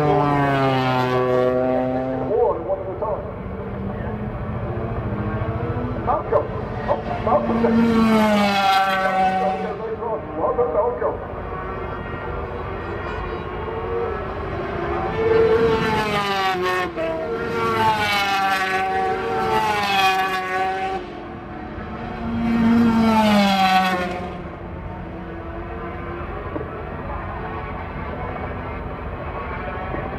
{"title": "Castle Donington, UK - British Motorcycle Grand Prix 2001 ... 500cc warm up ...", "date": "2001-07-08 10:00:00", "description": "500cc warm up ... Starkeys ... Donington Park ... warm up plus all associated noise ... Sony ECM 959 one point stereo mic to Sony Minidisk ...", "latitude": "52.83", "longitude": "-1.37", "altitude": "81", "timezone": "Europe/London"}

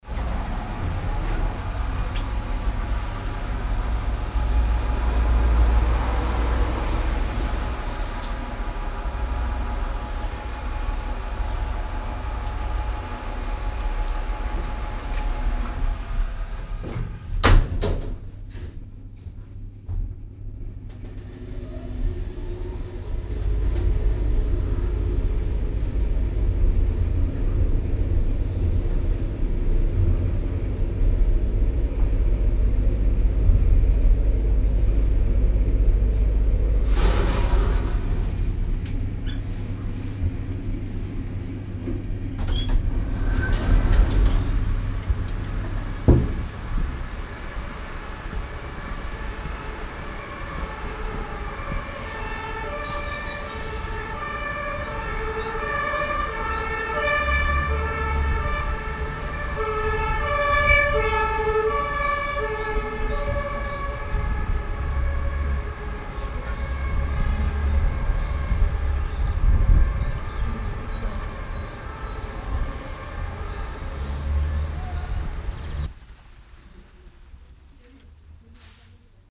{"title": "mettmann, brücker str, stadtaufzug", "description": "fahrt mit dem aufzug zur hoch gelegenen bahnhaltestelle, oben verkehrsgeräusche und polizeisirene\n- soundmap nrw\nproject: social ambiences/ listen to the people - in & outdoor nearfield recordings", "latitude": "51.25", "longitude": "6.98", "altitude": "136", "timezone": "GMT+1"}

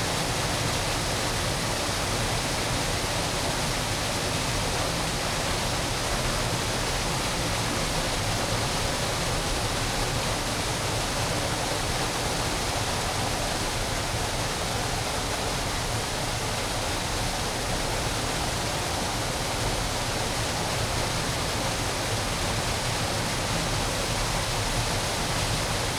{"title": "Lithuania, lake Paliminis, water falling into well", "date": "2011-05-14 14:40:00", "description": "some kind of mini-dam", "latitude": "55.50", "longitude": "25.72", "altitude": "164", "timezone": "Europe/Vilnius"}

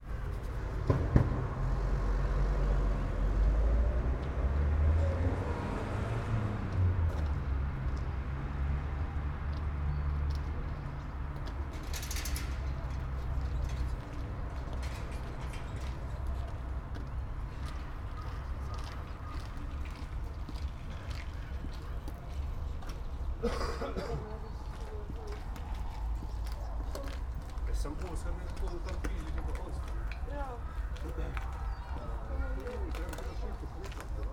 {"title": "all the mornings of the ... - feb 9 2013 sat", "date": "2013-02-09 09:52:00", "latitude": "46.56", "longitude": "15.65", "altitude": "285", "timezone": "Europe/Ljubljana"}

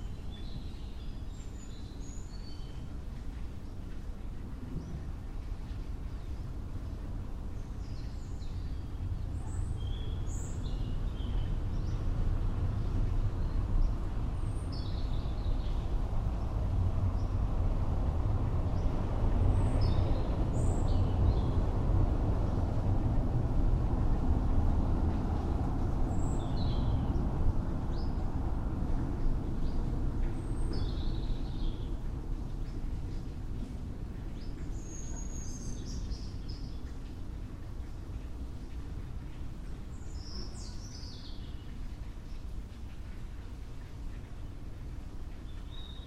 {"title": "Via Pusterla, Saluzzo CN, Italia - Garden Robert Baden Powell", "date": "2016-10-27 16:40:00", "description": "Recorded with a Tascam DR-700 in Garden Robert Baden Powell, APM PLAY IN workshop 2016 (Take 2)", "latitude": "44.64", "longitude": "7.49", "altitude": "377", "timezone": "Europe/Rome"}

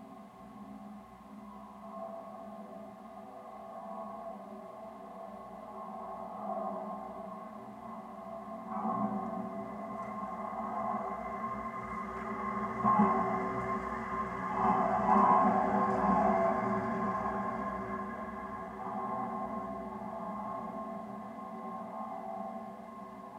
{"title": "tram pole in the Prater, Vienna", "date": "2011-08-12 11:36:00", "description": "tram pole in the Prater park", "latitude": "48.21", "longitude": "16.40", "altitude": "162", "timezone": "Europe/Vienna"}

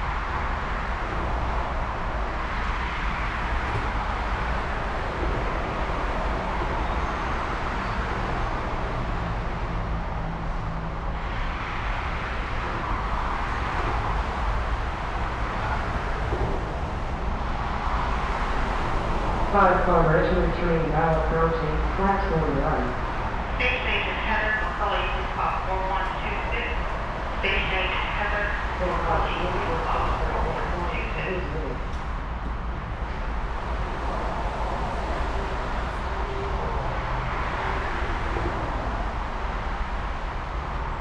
{"title": "MacArthur BART, Oakland, CA, USA - MacArthur BART Station", "date": "2016-01-13 13:00:00", "description": "Recorded with a pair of DPA 4060s and a Marantz PMD661", "latitude": "37.83", "longitude": "-122.27", "altitude": "26", "timezone": "America/Los_Angeles"}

